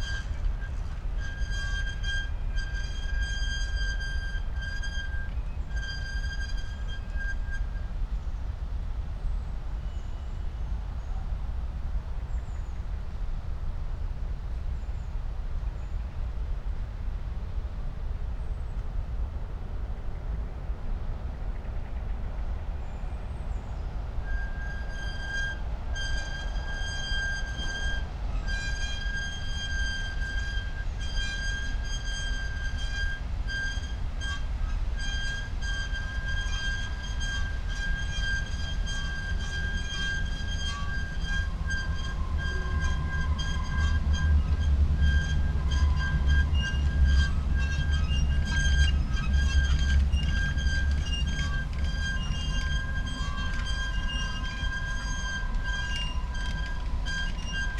Gleisdreieck, Kreuzberg, Berlin - wind wheels, city soundscape
park behind technical museum, Berlin. ensemble of squeaking wind wheels in the trees, sound of passing-by trains, distant city sounds
(Sony PCM D50, DIY Primo EM172 array)